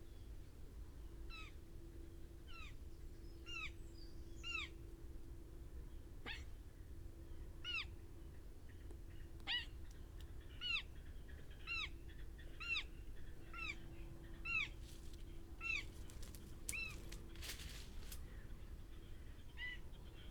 Green Ln, Malton, UK - young roe deer ...
young roe deer ... recording singing chiffchaff ... then heard this ... roe deer had crossed in front of me some five minutes previous ... young deer then wandered into the hedgerow space ... lost ..? separated ..? from adult ... dpa 4060s in parabolic to MixPre3 ... not edited ... opportunistic recording ... bird calls ... crow ... red-legged partridge ... pheasant ... blackbird ... pied wagtail ... wren ... robin ...